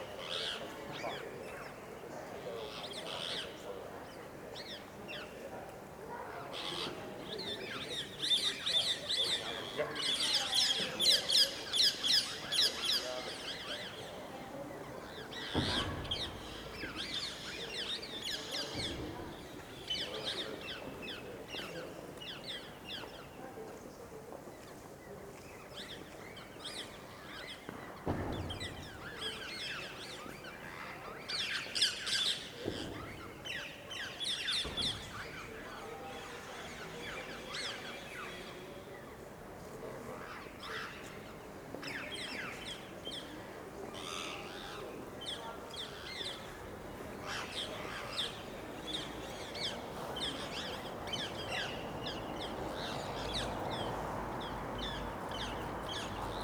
Leonardo Square, Univeristy of Pavia, Italy - birds on the medieval towers
in front of the three medieval towers, also courtyard of the University, lots of different kind of birds make their nest in the holes of the tower. People passing by in the background.
27 October 2012